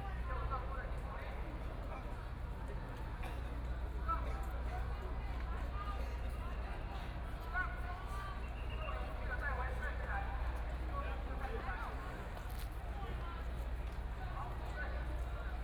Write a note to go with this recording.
Student activism, Walking through the site in protest, People and students occupied the Executive Yuan, The police are strongly expelled student